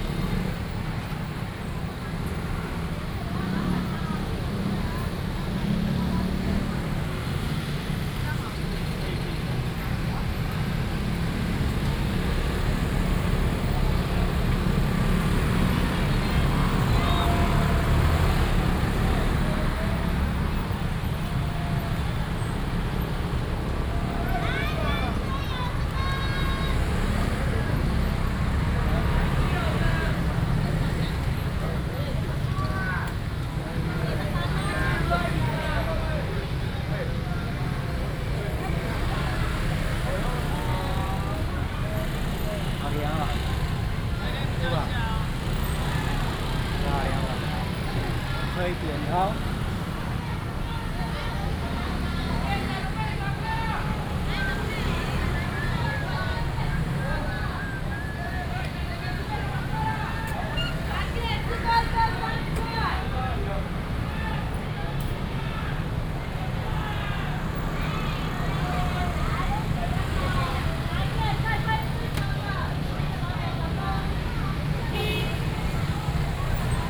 Sec., Jiangning Rd., 板橋區, New Taipei City - Walking in the traditional market
Walking through the traditional market, Cries of street vendors, A large of motorcycles and people are moving in the same street